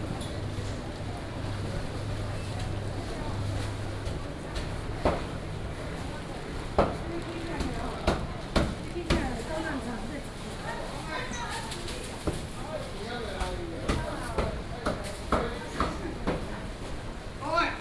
Xinxing Rd., Banqiao Dist., New Taipei City - Walking in the traditional market
Walking in the traditional market, Traffic Sound